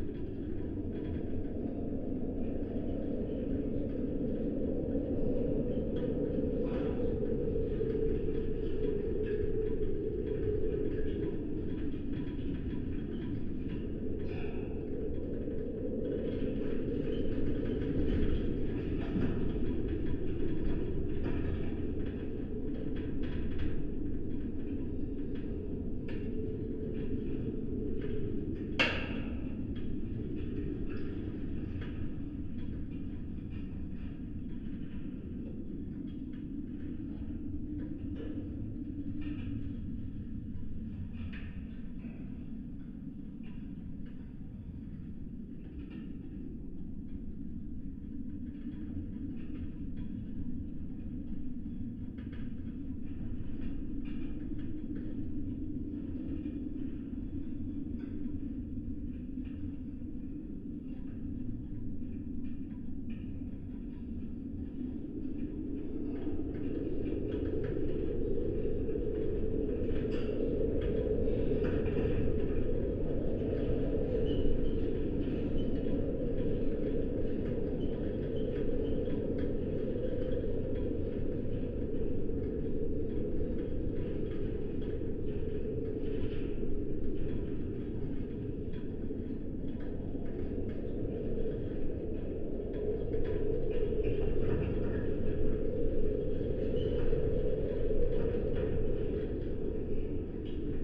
Diciunai, Lithuania, musical fence
contact microphones on a fence
18 July